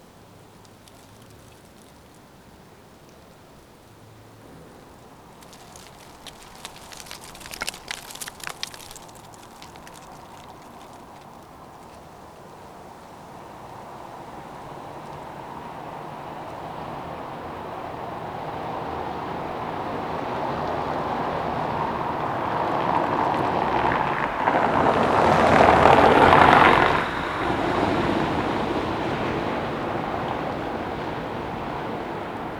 Berlin: Vermessungspunkt Friedel- / Pflügerstraße - Klangvermessung Kreuzkölln ::: 09.11.2012 ::: 01:22
Berlin, Germany, 9 November 2012, 01:22